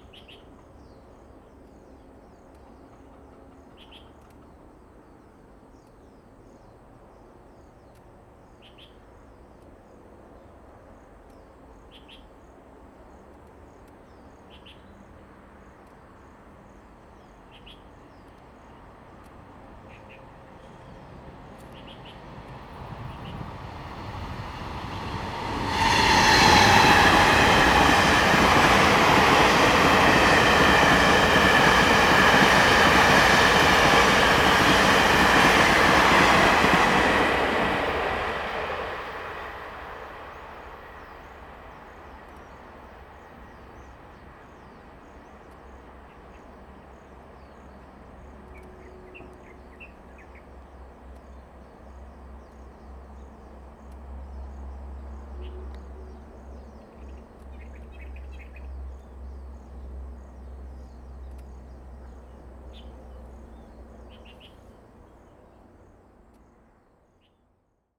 景美村, Sioulin Township - Traveling by train
Traveling by train, Birdsong sound, The weather is very hot, Small village, Traffic Sound
Zoom H2n MS+XY